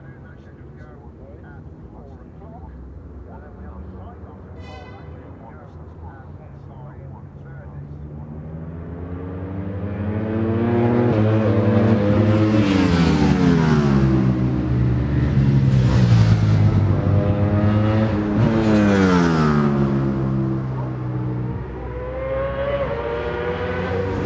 Brands Hatch GP Circuit, West Kingsdown, Longfield, UK - World Superbikes 2001 ... superbikes ...
World Superbikes 2001 ... Qualifying ... part one ... one point stereo mic to minidisk ...